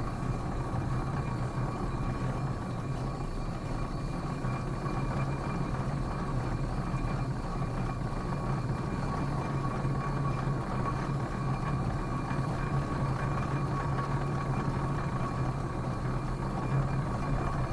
Amners Farm, Burghfield, UK - Travelling in a 1930s steam engine
This is the sound of traveling on a vintage steam engine from the 1930s, made in Basingstoke. This amazing engine is powered entirely by steam, and what you can hear are the cogs and pistons turning, and the hiss of the steam and the fire. The steam whistle sounds when pressure created by steam forces air up through it.